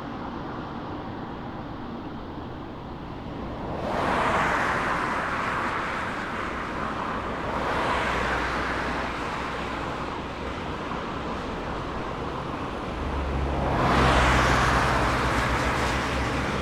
Vehicles going in and out of the tunnel under the shopping centre in central Rovaniemi. Zoom H5 with default X/Y module.
Manner-Suomi, Suomi